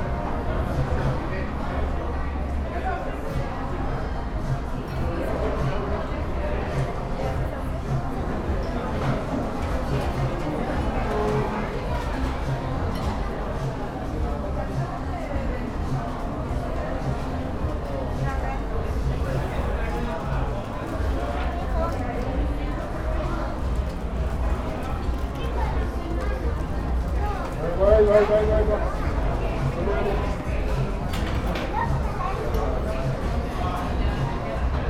Blvd. Juan Alonso de Torres Pte., Valle del Campestre, León, Gto., Mexico - Restaurante japonés Sushi Tai.
Sushi Tai Japanese restaurant.
I made this recording on april 24th, 2022, at 3:51 p.m.
I used a Tascam DR-05X with its built-in microphones and a Tascam WS-11 windshield.
Original Recording:
Type: Stereo
Esta grabación la hice el 24 de abril de 2022 a las 15:51 horas.